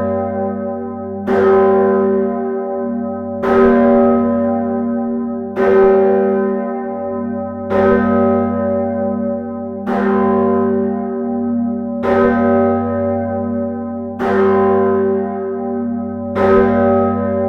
Bruxelles, Belgique - Brussels big bell
The Brussels big bell, called Salvator.
This is a 1638 bell made by the bellfounder Peeter Vanden Gheyn.
The ringing system is very old. Renovating it would be a must.
We ringed Salvator manually the 11/11/11 at 11h11.
Thanks to Thibaut Boudart welcoming us !
Place Sainte-Gudule, Bruxelles, Belgium, 11 November 2011